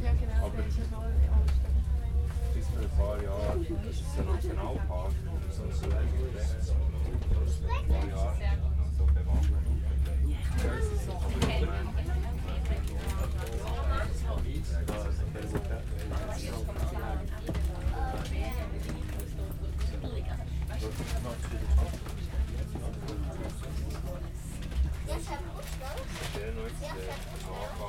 Zug vor Bern, Schnellzug in die Alpenkantone
Intercity nach Bern und in die Berneroberländer-Städte, Weiterfahrt nach Spiez geplant